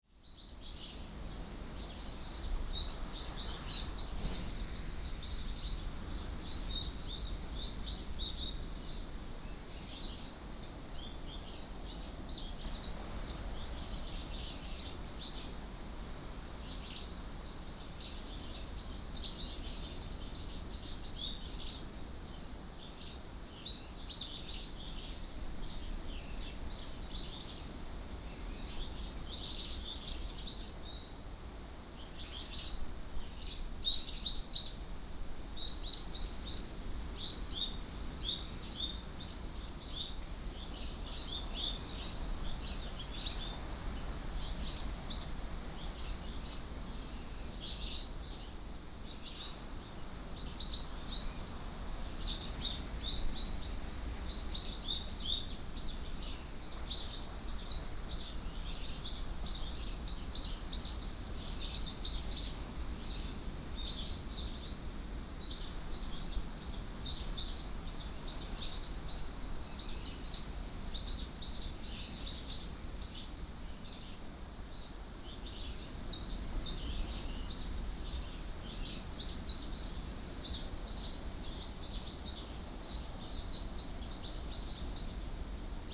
東清村, Koto island - Birds singing
Birds singing, sound of the waves